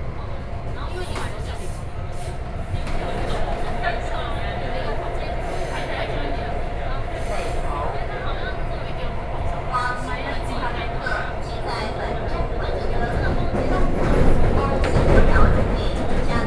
Beitou, Taipei City - Inside the MRT
Inside the MRT, Sony PCM D50 + Soundman OKM II